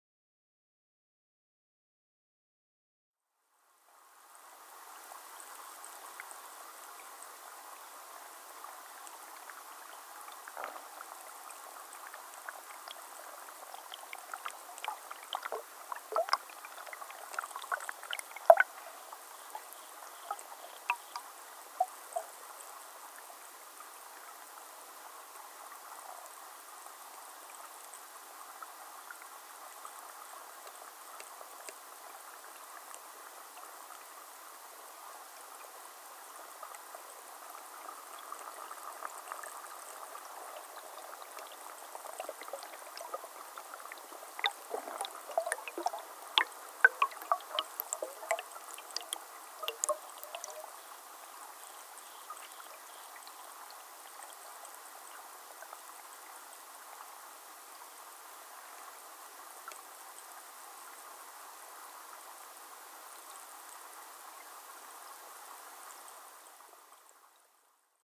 still waters and the odd waterboatman?